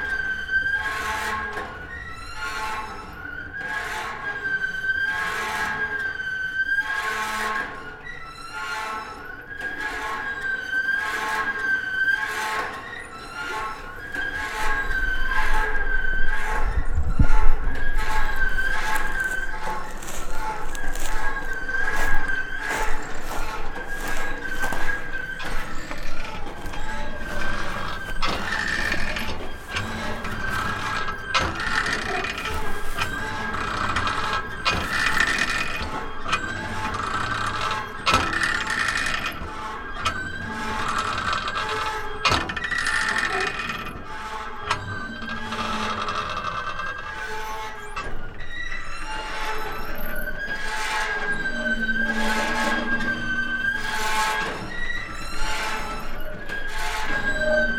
Morning Star Ridge, Lamy, NM, USA - Squeaky Windmill Pumping Water in a New Mexico Desert
Listen to this giant old rusty windmill speed up and slow down as the wind helps it pump water for thirsty cattle in this gorgeous high desert of New Mexico.